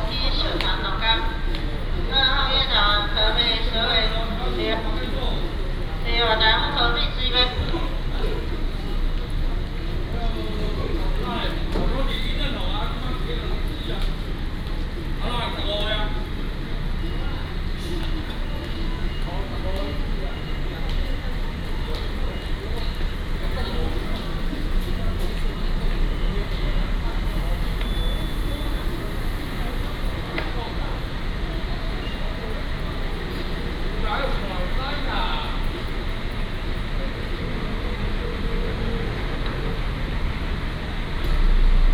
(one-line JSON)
{"title": "彰化客運彰化站, Changhua City - At the passenger terminal", "date": "2017-01-31 19:05:00", "description": "At the passenger terminal, Traffic sound, Station broadcasting", "latitude": "24.08", "longitude": "120.54", "altitude": "29", "timezone": "GMT+1"}